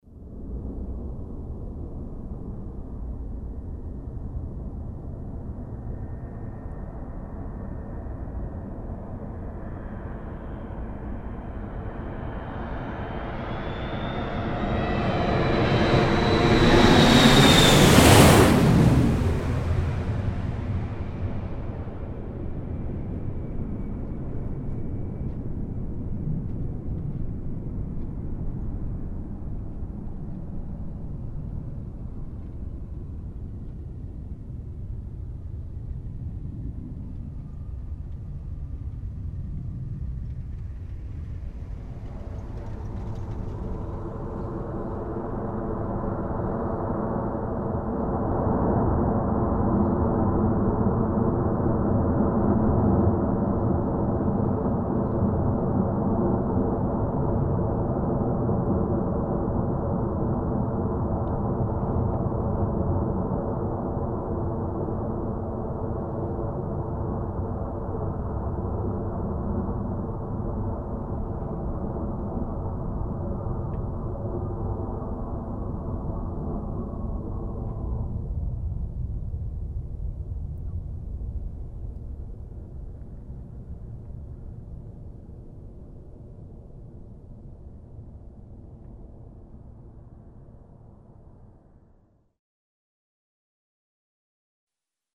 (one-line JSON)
{"title": "frankfurt airport, plane llift off - frankfurt airport, plane lift off", "date": "2009-12-01 10:53:00", "description": "at the airport - a plane starting nearbye in the early afternoon\nsoundmap d - social ambiences and topographic field recordings", "latitude": "50.03", "longitude": "8.55", "altitude": "100", "timezone": "Europe/Berlin"}